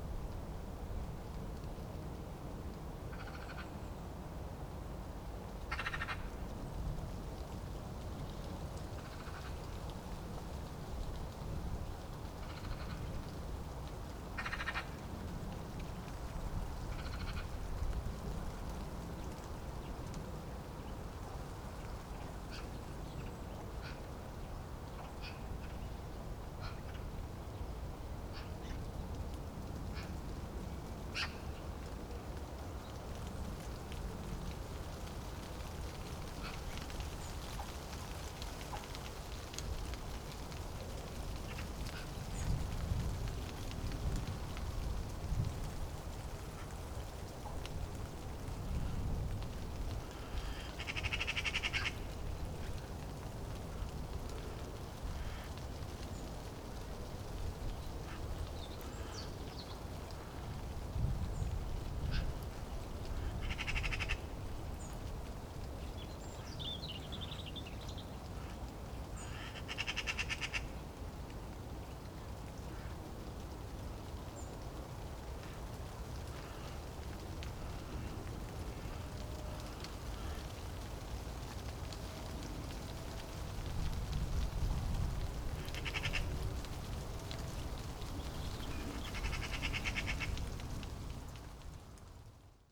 Deponie, disposal site, Schönefeld, Deutschland - Populus tremula
European aspen, Espe, Zitterpappel, Populus tremula shaking in light wind, at the edge of a former disposal site. west german garbage was dropped on this east german landfill.
(Sony PCM D50)
Brandenburg, Deutschland, 13 November 2021